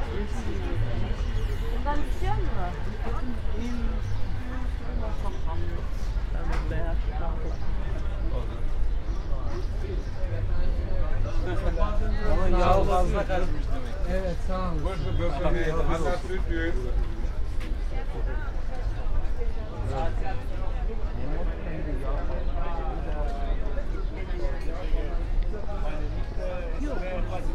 Maybachufer market walk, spring Tuesday, sunny but nor warm, rather quiet market, i.e. not so much sellers shouting.
field radio - an ongoing experiment and exploration of affective geographies and new practices in sound art and radio.
(Tascam iXJ2/iPhoneSE, Primo EM172)